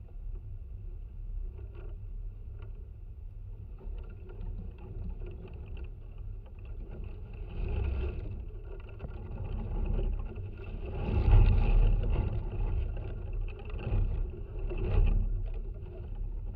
Libertava, Lithuania, abandoned mansion contact
abandoned wooden mansion. contact microphones placed between the boards of old stairs. there;s strong wind outside, so the house is alive...
Utenos apskritis, Lietuva, 27 October 2019, 13:10